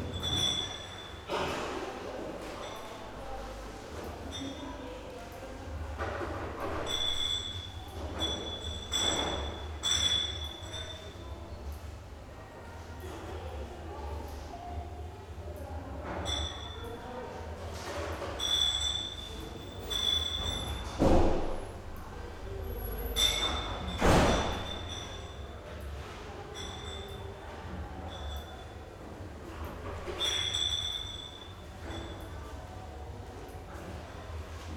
Berlin, Urbanstr., Nachbarschaftshaus - entry hall
entry hall ambiance
Berlin, Germany